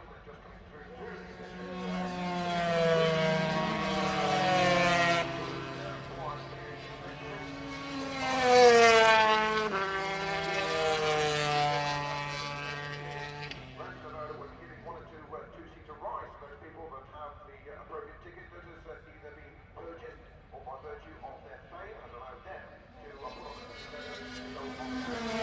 Unnamed Road, Derby, UK - british motorcycle grand prix 2006 ... 125 warm up
british motorcycle grand prix 2006 ... 125 warm up ... one point stereo mic to mini disk ... commentary ...
England, United Kingdom, 2 July